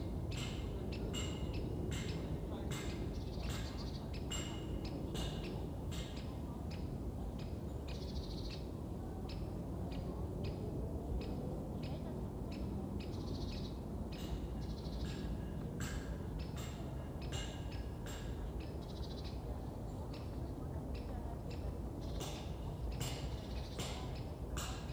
Chipping stone and Woodpecker
The short sharp call of a woodpecker (greater spotted?) sounds well with the stone chipping.
Berlin, Germany, 13 November 2011, ~15:00